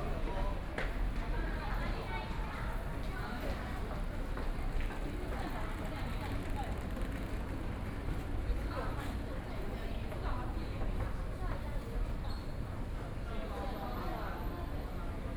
中正區黎明里, Taipei City - To MRT station
Walking To MRT station, Traffic Sound, The crowd